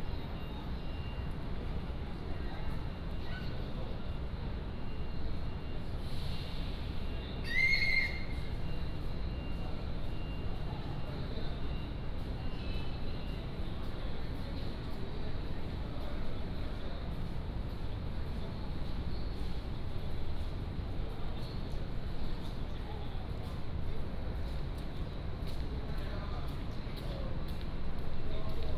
台灣高鐵台中站, Taiwan - Station hall
at the Station hall